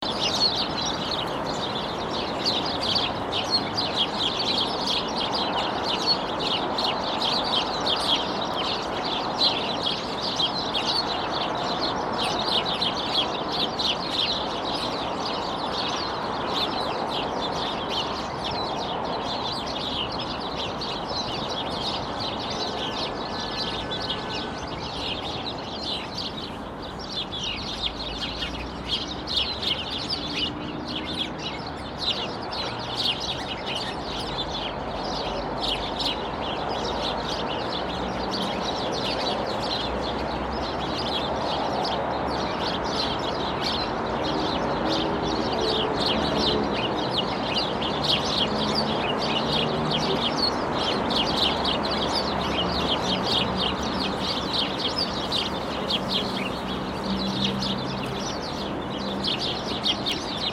Енисейская ул., Москва, Россия - Not far from Babushkinskaya metro station.
Not far from Babushkinskaya metro station. Near the house on 25 Yeniseyskaya street. You can hear sparrows chirping in the bushes. Cars are driving in the background. Day. The end of a warm winter.
Центральный федеральный округ, Россия, 17 February 2020, 13:21